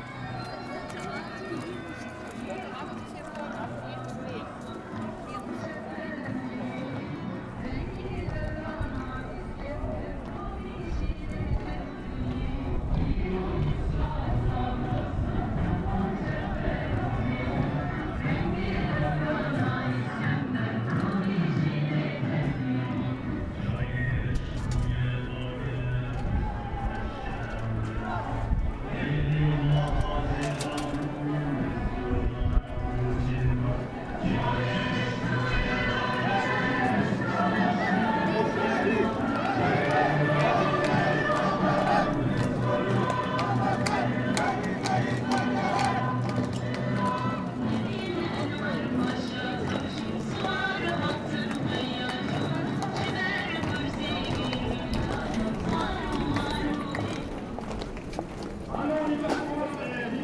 berlin: unter den linden/unterwasserstraße - the city, the country & me: kurdish demonstration
kurdish demonstration against arms transfer to turkey followed by police cars
the city, the country & me: april 10, 2011